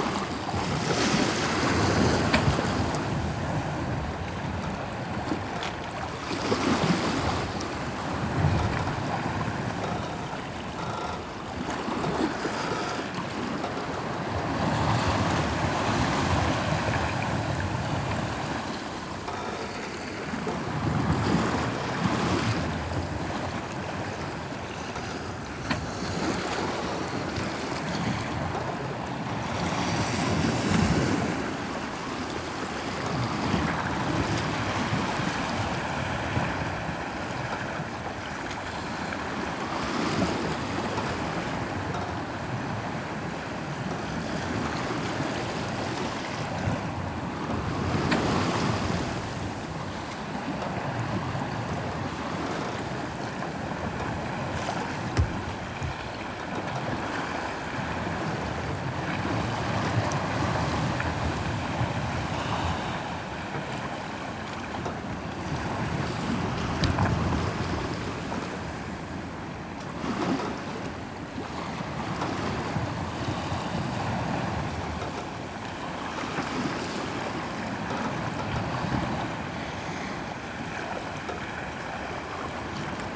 {
  "title": "Sound of Eigg - The Sound of Eigg: Sailing (Part 1)",
  "date": "2019-07-02 14:57:00",
  "description": "Recorded with a stereo pair of DPA 4060s and a Sound Devices MixPre-3",
  "latitude": "56.87",
  "longitude": "-6.20",
  "timezone": "Europe/London"
}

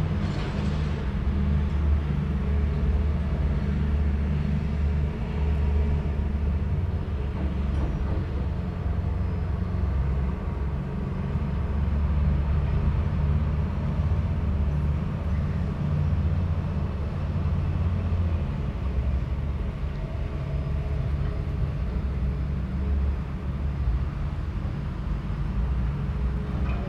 {
  "title": "Niehler Hafen, container harbour, Köln - early evening harbour ambience",
  "date": "2013-07-18 18:45:00",
  "description": "a few steps ahead\n(Sony PCM D50, DPA4060 AB60cm)",
  "latitude": "50.98",
  "longitude": "6.98",
  "altitude": "44",
  "timezone": "Europe/Berlin"
}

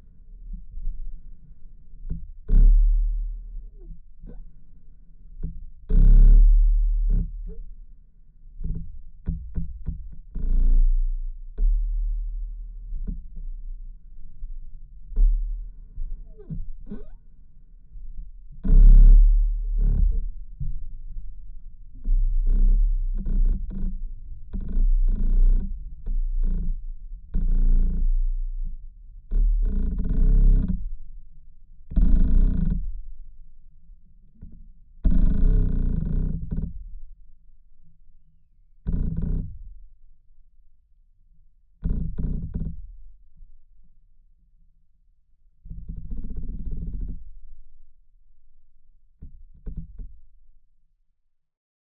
{
  "title": "Stabulankiai, Lithuania, movements inside the tree",
  "date": "2020-04-12 16:20:00",
  "description": "another tree recording made with a pair oc contact mics and LOM geophone. inner vibrations. low frequencies, so listen through good speakers or headphones",
  "latitude": "55.52",
  "longitude": "25.45",
  "altitude": "174",
  "timezone": "Europe/Vilnius"
}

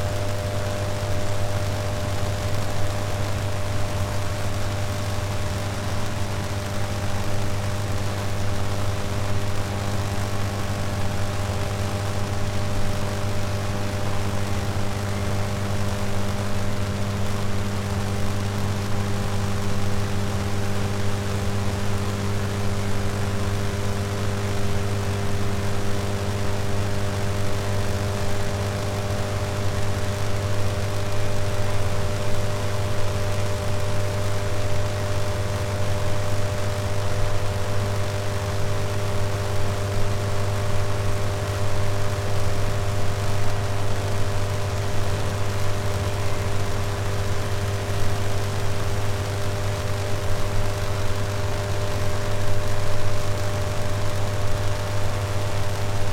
{
  "title": "Umeå, Sörfors-kraftstation. Electricity in the rain.",
  "date": "2011-05-06 16:46:00",
  "description": "Rain on the power station units",
  "latitude": "63.85",
  "longitude": "20.05",
  "altitude": "98",
  "timezone": "Europe/Stockholm"
}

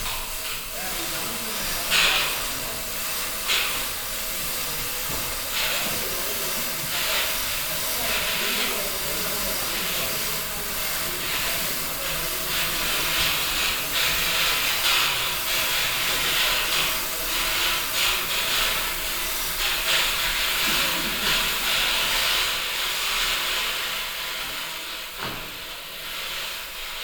{
  "title": "nürnberg, kongresshalle, theatre",
  "date": "2010-04-23 18:47:00",
  "description": "inside the kongresshalle in the theatre while stage set up. - the sounds of chains lifting up a construction\nsoundmap d - social ambiences and topographic field recordings",
  "latitude": "49.43",
  "longitude": "11.11",
  "altitude": "319",
  "timezone": "Europe/Berlin"
}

